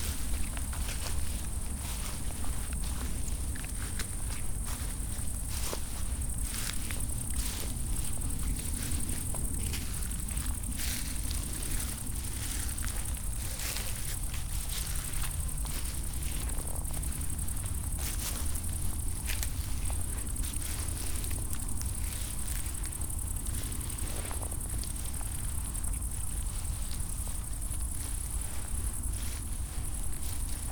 path of seasons, july meadow, piramida - tall grass lying, walking